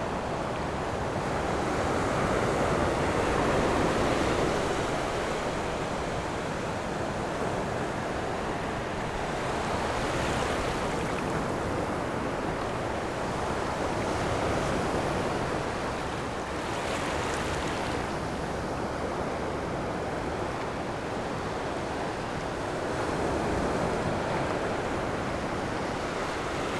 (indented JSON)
{
  "title": "壯圍鄉過嶺村, Yilan County - sound of the waves",
  "date": "2014-07-26 14:49:00",
  "description": "Sound of the waves, In the beach\nZoom H6 MS+ Rode NT4",
  "latitude": "24.76",
  "longitude": "121.82",
  "timezone": "Asia/Taipei"
}